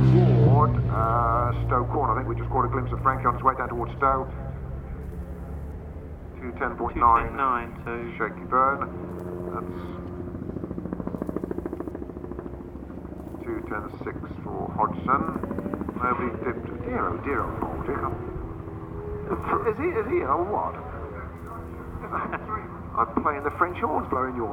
world superbikes 2002 ... qualifying ... one point stereo to sony minidisk ... commentary ... time optional ...
East Midlands, England, UK, 25 June